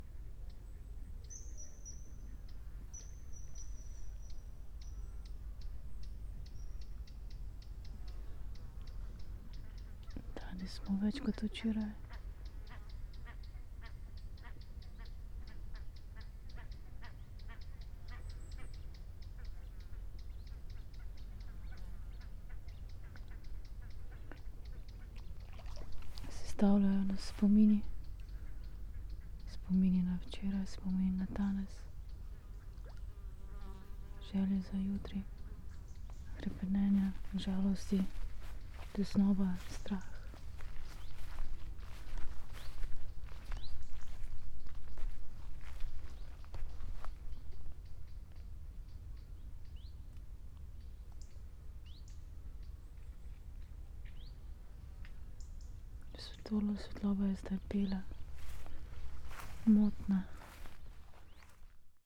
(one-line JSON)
{"title": "walking poems, Slovenia - walking poems", "date": "2012-09-02 18:23:00", "description": "late summer ambience while walking the poem", "latitude": "46.43", "longitude": "15.66", "altitude": "264", "timezone": "Europe/Ljubljana"}